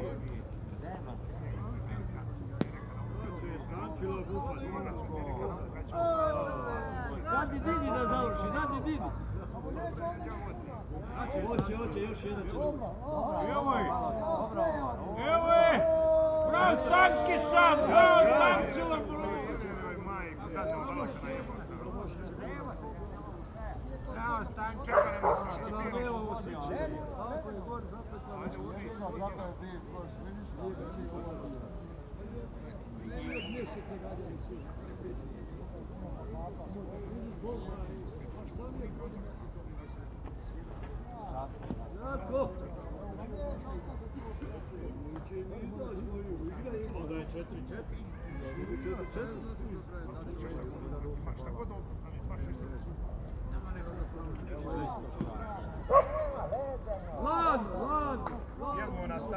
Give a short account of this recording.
Russian boule is a rougher version of the French game, with more contestants, longer distances to throw and more jumping while doing so. Big dogs play in the background